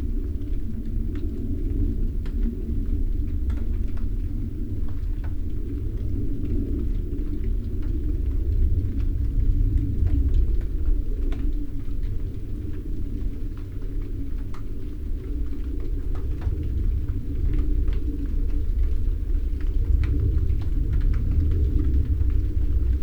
{"title": "Recordings in the Garage, Malvern, Worcestershire, UK - Rain Jet Mouse", "date": "2021-07-28 03:02:00", "description": "At 3am. a high jet passes as light rain begins. A few paces away the mouse trap in the shed is triggered. The jet continues and the rain falls.\nRecorded overnight inside the open garage with a MixPre 6 II and 2 x Sennheiser MKH 8020s", "latitude": "52.08", "longitude": "-2.33", "altitude": "120", "timezone": "Europe/London"}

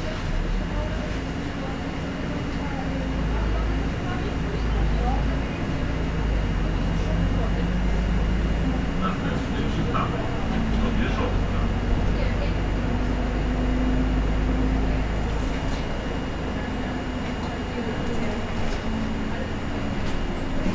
{"title": "Cieplicka, Jelenia Góra, Poland - (889) Bus ride", "date": "2022-02-16 15:00:00", "description": "Recording of a bus ride.\nRecorded with Olympus LS-P4.", "latitude": "50.86", "longitude": "15.66", "altitude": "350", "timezone": "Europe/Warsaw"}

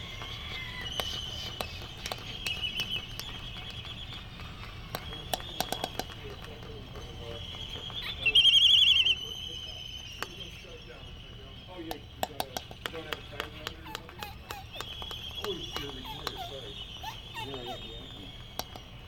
{"title": "United States Minor Outlying Islands - Laysan albatross dancing ...", "date": "1997-12-25 10:05:00", "description": "Sand Island ... Midway Atoll ... laysan albatross dancing ... calls from white terns ...Sony ECM 959 one point stereo mic to Sony Minidisk ... warm sunny breezy morning ... background noise ...", "latitude": "28.22", "longitude": "-177.38", "altitude": "9", "timezone": "Pacific/Midway"}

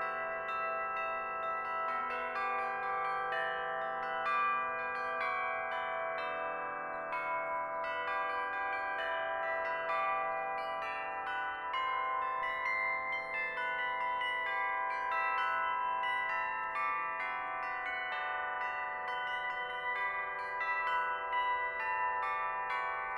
{"title": "Андропова пр-т, строение, Москва, Россия - Russian instrument Bilo", "date": "2014-06-22 16:36:00", "description": "Russian instrument \"Bilo\" (flat bells). The recording was made in the park \"Kolomenskoye\" on June 22, 2014.", "latitude": "55.67", "longitude": "37.67", "altitude": "142", "timezone": "Europe/Moscow"}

